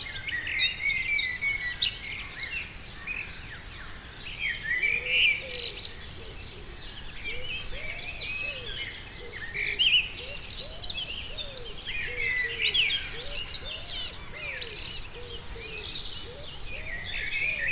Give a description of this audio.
Près du Sierroz le chant matinal des merles, passage de goelands, puis pigeons ramiers.